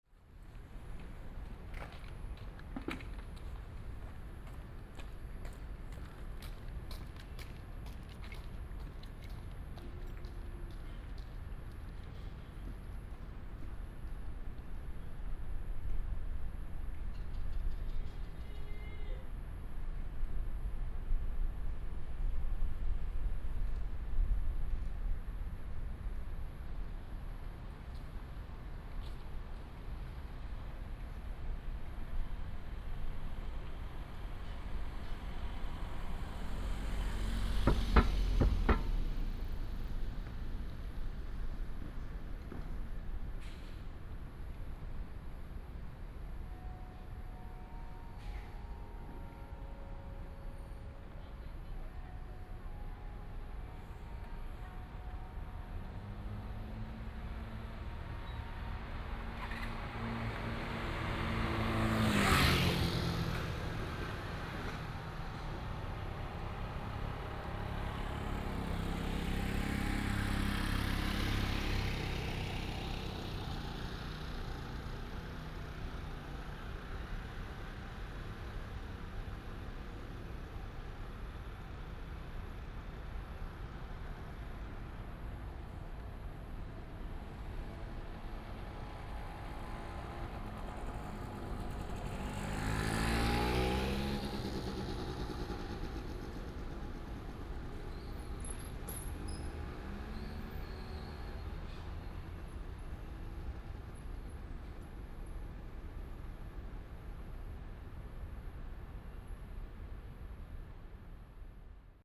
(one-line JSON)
{"title": "精忠新村, East Dist., Hsinchu City - in the old community alley", "date": "2017-10-06 18:00:00", "description": "Has been removed in the old community alley, traffic sound, The school bells, Binaural recordings, Sony PCM D100+ Soundman OKM II", "latitude": "24.80", "longitude": "120.99", "altitude": "56", "timezone": "Asia/Taipei"}